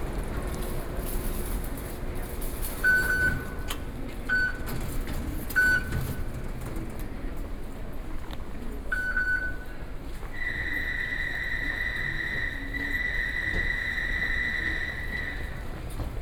Jingmei Station, Taipei City - Into the MRT stations
Wenshan District, Taipei City, Taiwan